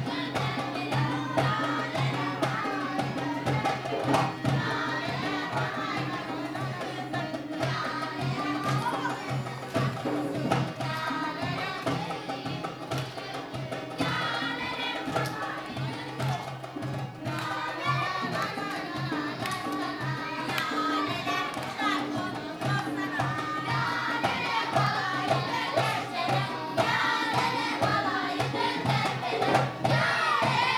Unnamed Road, Sabirabad, Azerbeidzjan - childeren in a camp full of refugees from war with Armenia
childeren in a camp full of refugees from war with Armenia sing and dance.